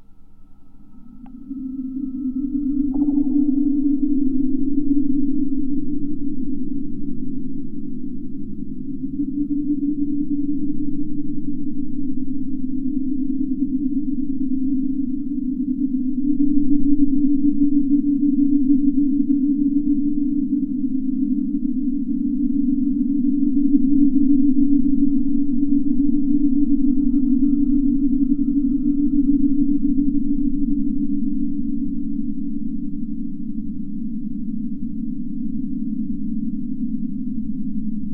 Vilnius, Lithuania, kind of Aeolian harp
aome kind of abandoned flag pole. tall and rusty with not less rusty wire. and it plays in breeze! you cannot hear it with naked ear but with help of geophone....
Vilniaus apskritis, Lietuva